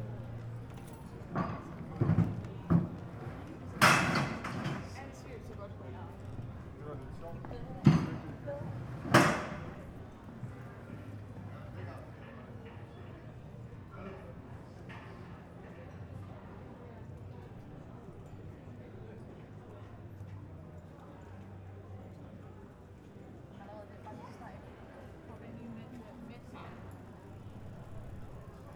Skindergade, København, Denmark - Workers in side street
Workers packing up a scaffold. Street violin band in the background from nearby shopping street. Pedestrians and cyclists. Swift calls. At the beginning, there are sounds from a commercial demonstration
Ouvriers rangeant un échauffaudage. Groupe de rue (violon) de la rue commercante voisine. Piétons et cyclistes. Cris de martinets. Au début, on peut entendre une manifestation à but commercial